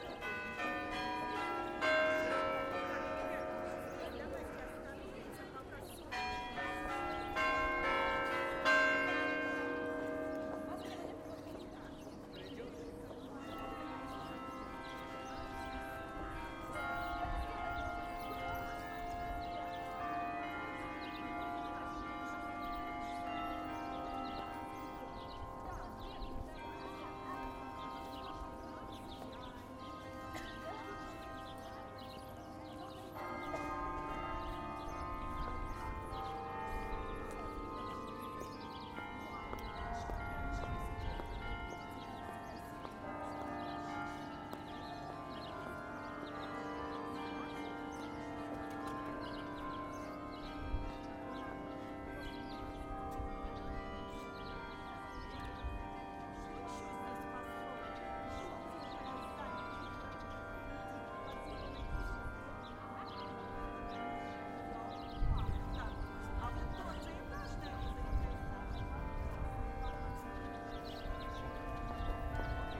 Peter and Paul Fortress, Saint-Petersburg, Russia - On the Peter and Paul Cathedral square
SPb Sound Map project
Recording from SPb Sound Museum collection
21 March 2015, 11:50